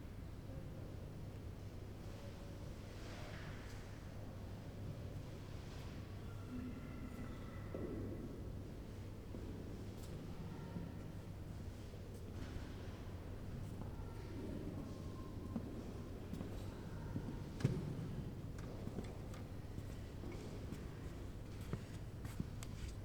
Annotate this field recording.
visitors, church bells, the city, the country & me: july 25, 2010